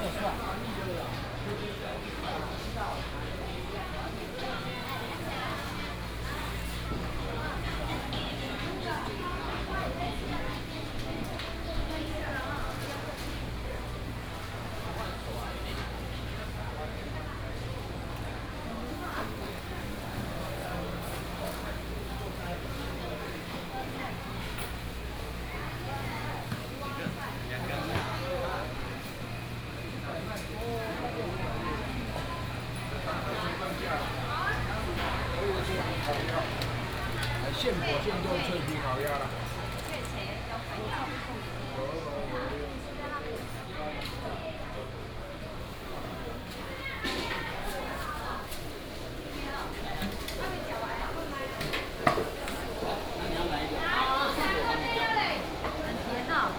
大溪黃昏市場, 桃園市大溪區 - Traditional dusk market
Traditional dusk market
Taoyuan City, Taiwan, 9 August, 15:58